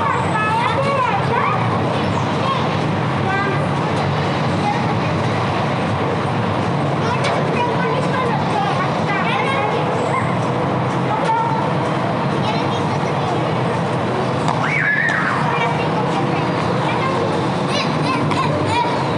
Av Calle, Bogotá, Colombia - Park in wetland in Bogota
Children's park in Bogota in a wetland Between 2 avenues with kids laughing and screaming, this place three fundamental sounds like the wind, light metal hits against floor (cars passing by fast) and traffic. We can hear also some sound signs like hanging bells that sound with strong wind, children scream, children's toys hitting the floor and a small car horn. Also for some sound marks, we can hear the kids voices, their steps, and birds in the wetland.